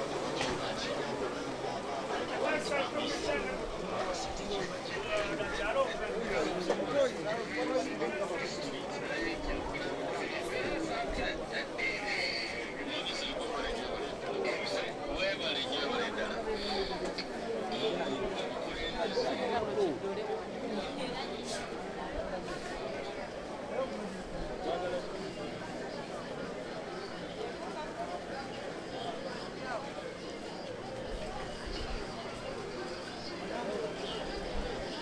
owinomarket, Kampala, Uganda - owino out
walking in owinomarket, recorded with a zoom h2